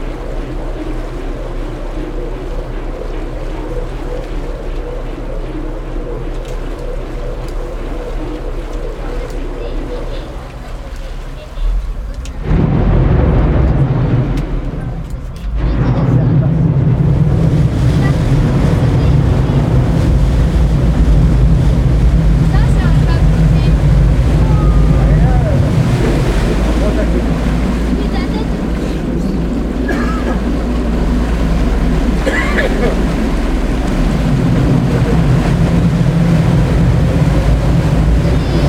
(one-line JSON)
{"title": "Venise, Italie - Vaporetto from Murano", "date": "2015-10-21 17:49:00", "description": "On the Vaporetto between Murano and Venice, Zoom H6", "latitude": "45.45", "longitude": "12.34", "timezone": "Europe/Rome"}